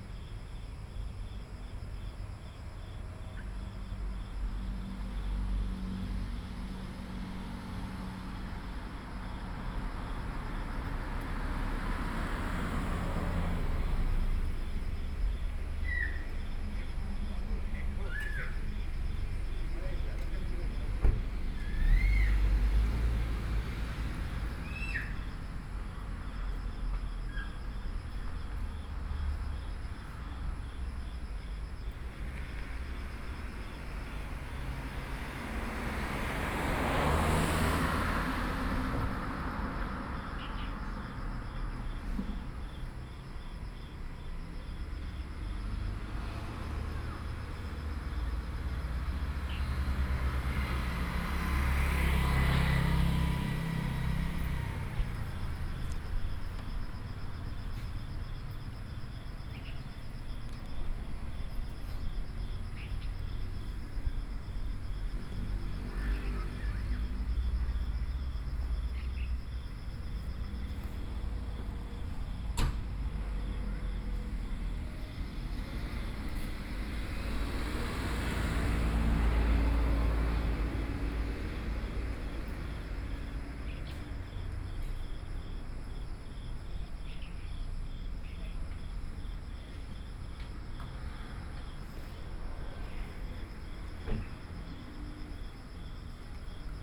In front of the Station, Small village, Birds, Traffic Sound, Trains traveling through
Sony PCM D50+ Soundman OKM II
Wujie Township, 復興中路38-48號, 25 July